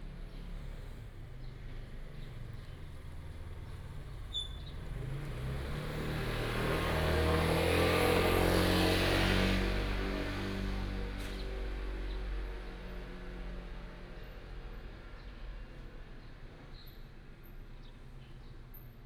Morning in the tribe, Tribal Message Broadcast, birds sound, traffic sound
Dawu Township, 大鳥聯外道路, 24 April, 06:55